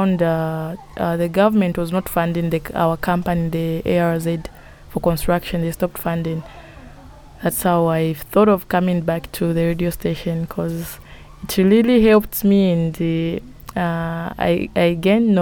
Sinazongwe Primary School, Sinazongwe, Zambia - I used to work as a data collector...
At the time I was staying with Zongwe FM, in August 2016, I found two young ladies working there in a group of five youths presenters. After making a recording with Patience Kabuku, here, we are sitting with Monica Sianbunkululu in the yard of Sinazongwe Primary listening to her story of how, as a lady, she found her way as a radio-maker with Zongwe FM. The children of the caretaker are playing in the yard; occasionally they try to attract our attention; we pause and listen to the girls singing across the yard... The radio helped her, she says, even to find a payed job as a data collector at the road construction company...
The recording forms part of THE WOMEN SING AT BOTH SIDES OF THE ZAMBEZI, an audio archive of life-story-telling by African women.